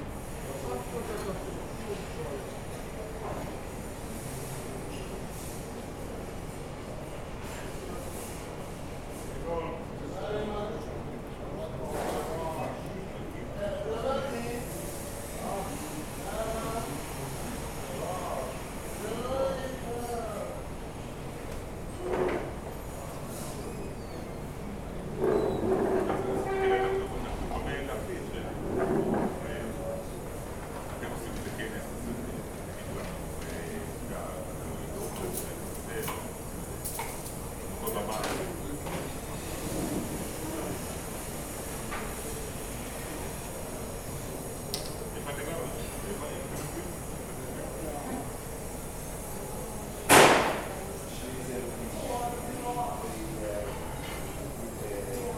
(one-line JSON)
{"title": "Martin Buber St, Jerusalem - Frank Sinatra Restaurant at the Hebrew University", "date": "2019-04-01 12:10:00", "description": "Frank Sinatra Restaurant at the Hebrew University", "latitude": "31.79", "longitude": "35.25", "altitude": "821", "timezone": "Asia/Jerusalem"}